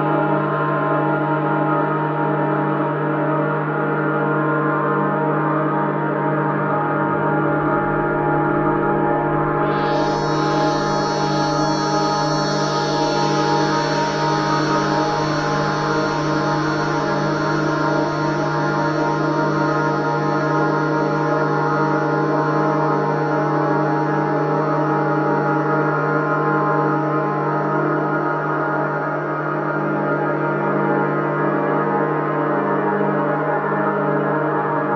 Middleton Light Railway - Middleton Light Railway 1758
The worlds oldest working railway